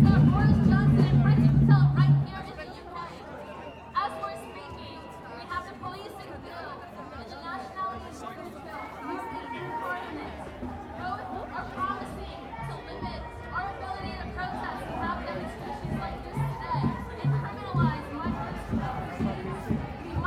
Portland Pl, London, UK - March Against Racism
England, United Kingdom